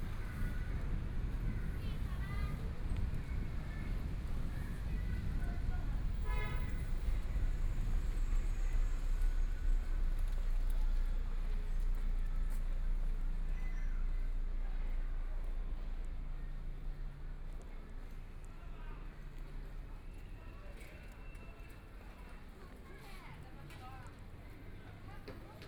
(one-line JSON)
{"title": "Ji'nan Road, Shanghai - Walking on the street", "date": "2013-12-01 12:36:00", "description": "Walking on the street, About to be completely demolished the old community, Binaural recordings, Zoom H6+ Soundman OKM II", "latitude": "31.22", "longitude": "121.48", "altitude": "10", "timezone": "Asia/Shanghai"}